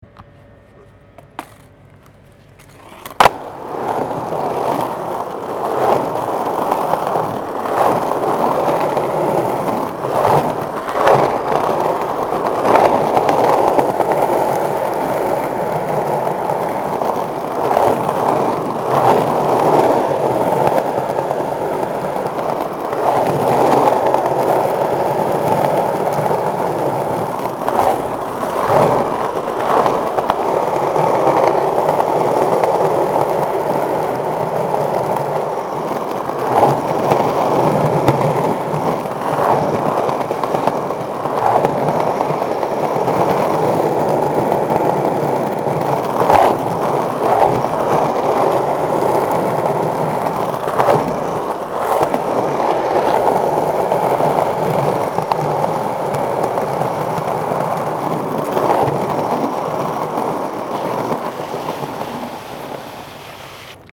{
  "title": "Tehran, استان تهران، تهران، منطقه ۱۲، سبزه میدان، بازار حراج، پلاک 44،، Iran - Dameshgh Skaters",
  "date": "2019-10-04 12:02:00",
  "description": "Dameshgh Skaters Tehran recorded by Ali Hashemlou during the sonic explorers residency.",
  "latitude": "35.68",
  "longitude": "51.42",
  "altitude": "1144",
  "timezone": "Asia/Tehran"
}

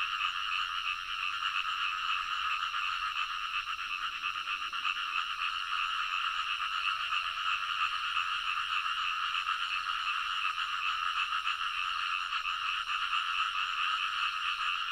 {
  "title": "Zhonggua Rd., TaoMi Li, Puli Township - Frogs and Insects sounds",
  "date": "2015-06-11 04:07:00",
  "description": "Early morning, Bird calls, Croak sounds, Insects sounds, Frogs sound",
  "latitude": "23.94",
  "longitude": "120.92",
  "altitude": "503",
  "timezone": "Asia/Taipei"
}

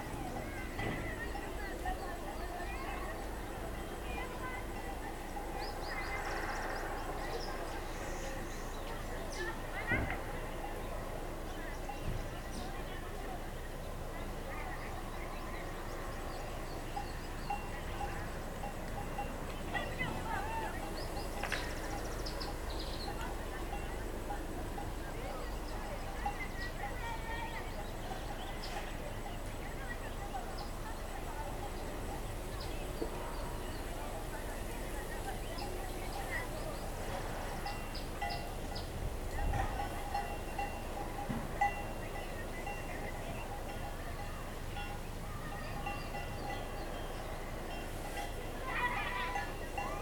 {
  "title": "Tusimpe dorm, Binga, Zimbabwe - a quiet morning...",
  "date": "2016-11-17 06:25:00",
  "description": "… starting from September, we were having electricity cuts three times a week for a full day… and if there were storms somewhere, the cuts may be more or longer… (apparently, the wooden poles in the area were replaced to prepare for the rainy season; that’s what we were told…)\nwhen I heard the sounds of the wheelbarrow (bringing a car battery), I knew what was coming next… and what would accompany us for the “rest” of the day. Here, and at the office (given, there was fuel…)...",
  "latitude": "-17.63",
  "longitude": "27.33",
  "altitude": "605",
  "timezone": "GMT+1"
}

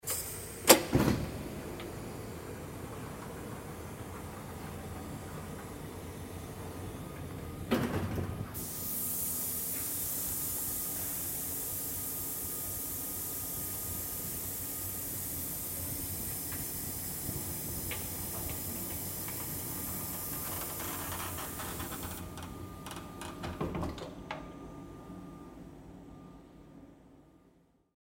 {
  "title": "ferry, cardeck, automatic door",
  "description": "recorded on night ferry trelleborg - travemuende, august 10 to 11, 2008.",
  "latitude": "55.37",
  "longitude": "13.15",
  "altitude": "1",
  "timezone": "GMT+1"
}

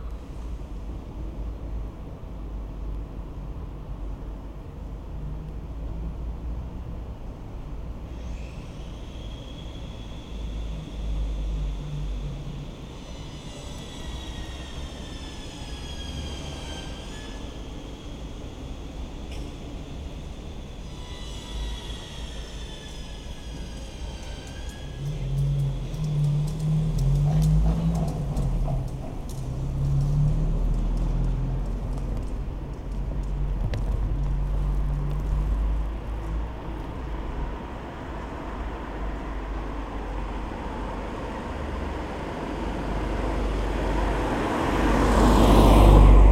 {
  "title": "leipzig alt-lindenau, georg-schwarz-straße zwischen ecke erich-köhn-straße & ecke calvisiusstraße",
  "date": "2011-09-01 09:30:00",
  "description": "sound-walk? langsam umhergehen mit mikrophonen am rucksack in der georg-schwarz-straße zwischen calvisius- und erich-köhn-straße. fahrzeuge, bauarbeiten, anwohner.",
  "latitude": "51.34",
  "longitude": "12.33",
  "altitude": "116",
  "timezone": "Europe/Berlin"
}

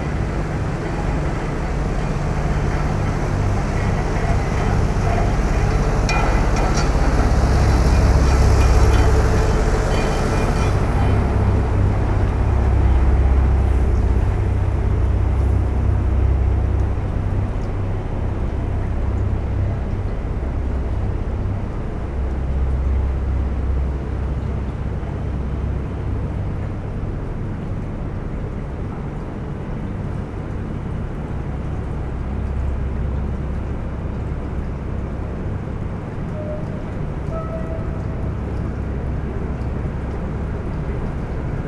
{
  "title": "La Grande-Paroisse, France - Varennes-Sur-Seine sluice",
  "date": "2016-12-28 10:00:00",
  "description": "A boat entering in the Varennes-Sur-Seine sluice. In first, the doors opening, after the boat, and at the end the doors closing. The boat is called Odysseus. Shipmasters are Françoise and Martial.",
  "latitude": "48.38",
  "longitude": "2.91",
  "altitude": "44",
  "timezone": "Europe/Berlin"
}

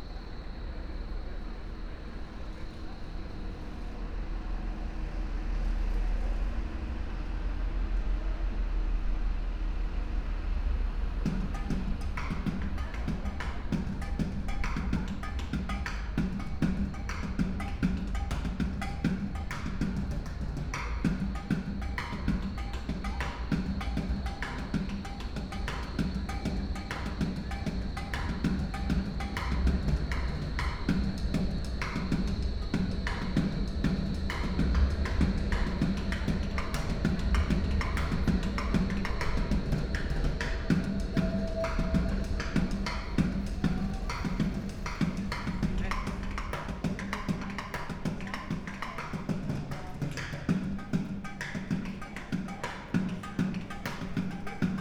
{
  "title": "Alexanderplatz, Berlin - drummer, station ambience",
  "date": "2017-05-24 21:25:00",
  "description": "a man improvising on empty plastic buckets, in front of the Alexanderplatz station entrance\n(Sony PCM D50, Primo EM172)",
  "latitude": "52.52",
  "longitude": "13.41",
  "altitude": "41",
  "timezone": "Europe/Berlin"
}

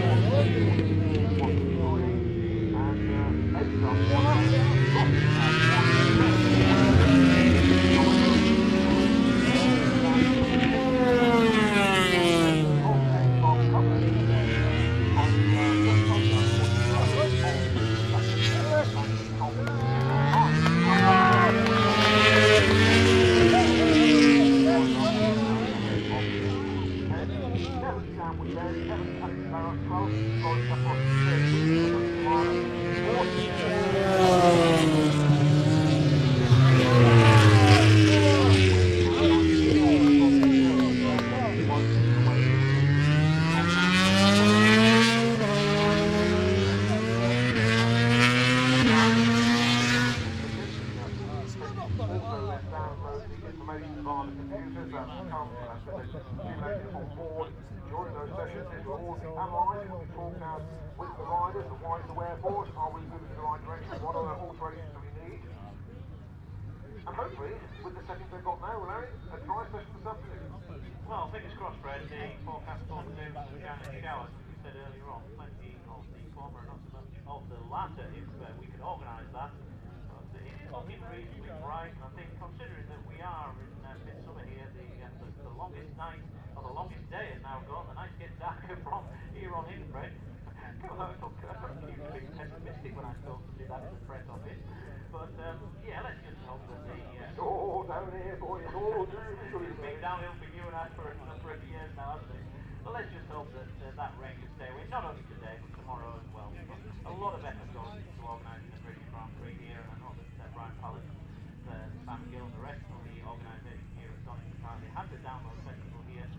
{
  "title": "Derby, UK - british motorcycle grand prix 2007 ... motogp free practice 3 ...",
  "date": "2007-06-23 10:00:00",
  "description": "british motorcycle grand prix 2007 ... motogp free practice 3 ... one point stereo mic ... audio technica ... to minidisk ... time approx ...",
  "latitude": "52.83",
  "longitude": "-1.38",
  "altitude": "96",
  "timezone": "Europe/London"
}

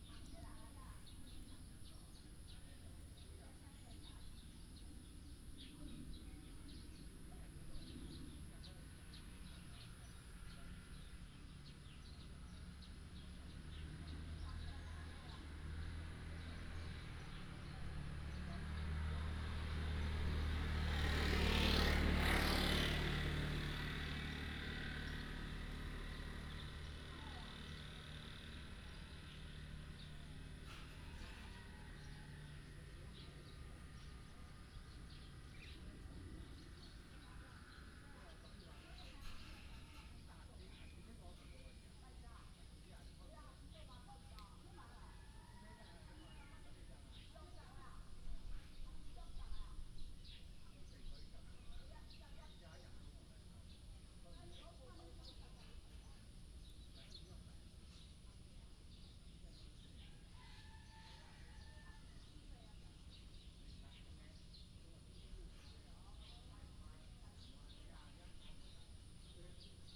{
  "title": "竹43鄉道富興, Emei Township - small village",
  "date": "2017-08-30 09:54:00",
  "description": "A small village in the mountains, Traffic sound, sound of birds, Chicken cry, Planted areas of tea, Binaural recordings, Sony PCM D100+ Soundman OKM II",
  "latitude": "24.69",
  "longitude": "120.99",
  "altitude": "86",
  "timezone": "Asia/Taipei"
}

